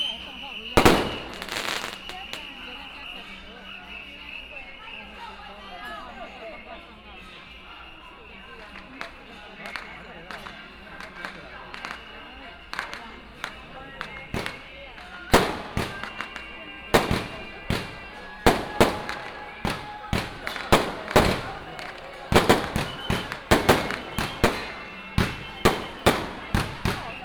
Baixi, 白沙屯, 通霄鎮 - In the railway level road
Matsu Pilgrimage Procession, Crowded crowd, Fireworks and firecrackers sound